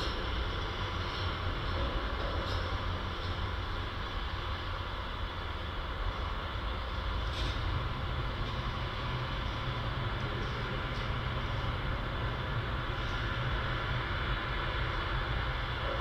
Margirio g., Ringaudai, Lithuania - Construction site wire fence
A quadruple contact microphone recording of a construction site fence. Wind and traffic ambience reverberating and resonating.
Kauno apskritis, Lietuva